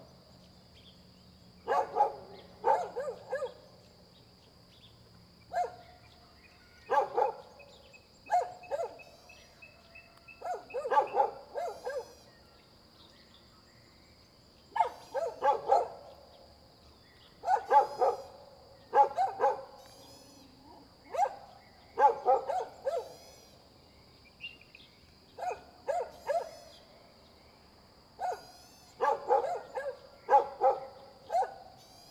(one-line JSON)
{"title": "水上, 桃米里埔里鎮 - Dogs barking and birds sound", "date": "2016-04-21 06:07:00", "description": "Dogs barking and birds sound\nZoom H2n MS+XY", "latitude": "23.94", "longitude": "120.92", "altitude": "564", "timezone": "Asia/Taipei"}